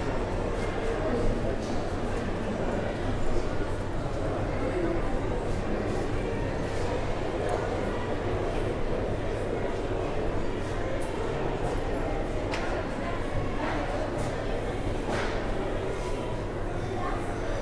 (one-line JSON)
{"title": "Motorway service station, Downside, Cobham, Surrey, UK - Motorway service stations sound like swimming pools", "date": "2015-02-01 16:25:00", "description": "Pausing at the service station on the M25 home, we were sitting having our coffees when I realised that the service station soundscape really reminded me of a huge swimming pool. The hum of electricity, the fraught children yelling, the huge expanses of glass reflecting all the sounds... ok the coffee-making sounds are less swimming-pool like but the din of many people in a large, reflective space was quite astounding. What a soup of noises. I drank my coffee and tuned in to the soup. Weirdly, you can't see the services at all on the aporee map; I think the satellite imagery predates this build. It feels very strange to overlay this very industrial, car-related racket onto a green field site. But I did double check the post-code and this is where the sound is. Maybe in thousands of years time aporisti will overlay this recording with the sounds of birds and trees once again.", "latitude": "51.30", "longitude": "-0.41", "altitude": "34", "timezone": "Europe/London"}